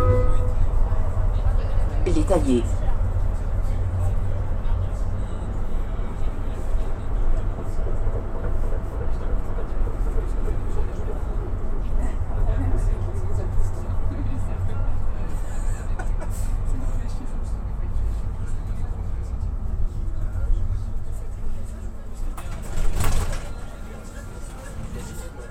Agn s at work Les Taillés RadioFreeRobots